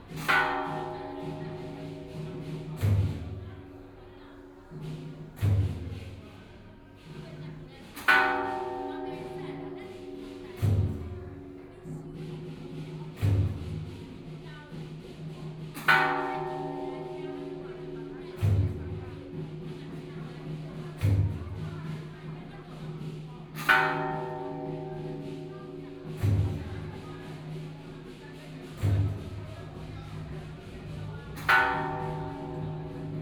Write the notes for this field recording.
Inside the temple drums and bells, Traditional Festivals, Mazu (goddess), Binaural recordings, Zoom H6+ Soundman OKM II